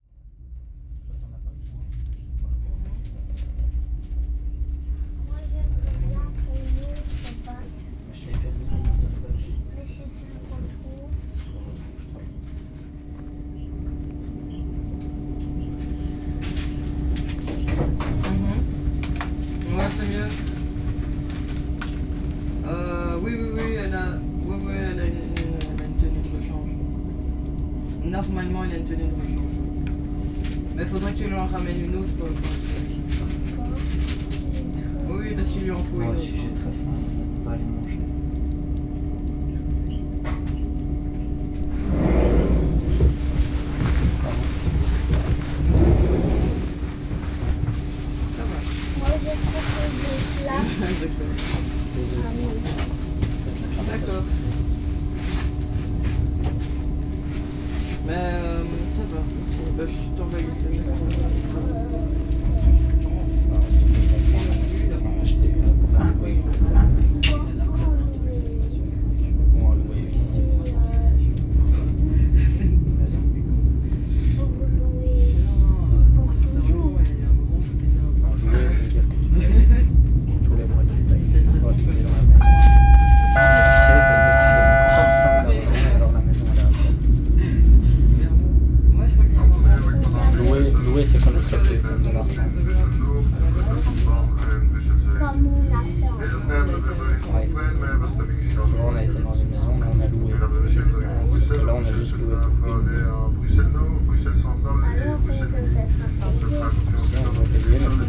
Train Near Brussels Nord, Father and son talking in the train, where to sleep, son is hungry.
3 December 2008, 17:46